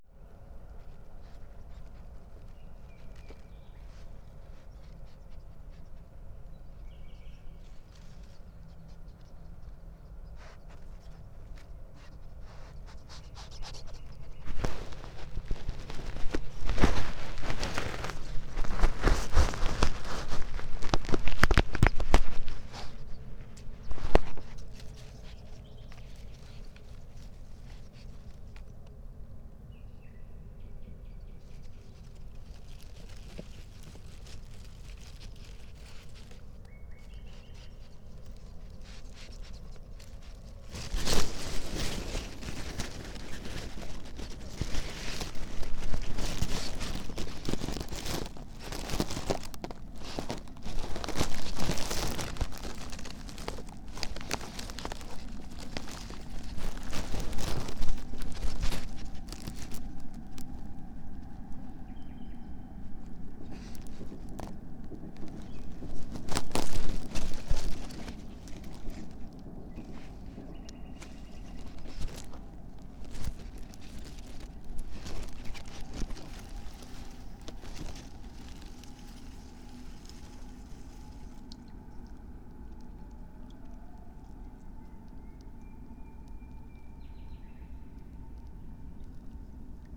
Berlin, Buch, Mittelbruch / Torfstich - midnight ambience /w curious animal
midnight at the pond, Berlin Buch, Torfstich 1 (peat cut), a curious animal has discovered the microphones and starts an investigation.
(excerpt of a steam log made with remote microphone)
Deutschland, 15 May